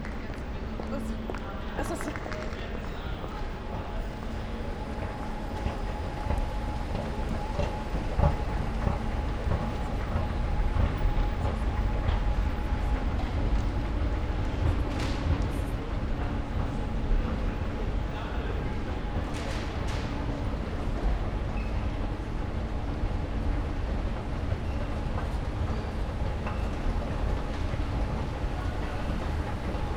walking around Graz main station at Friday night
(Sony PCM D50, Primo EM172)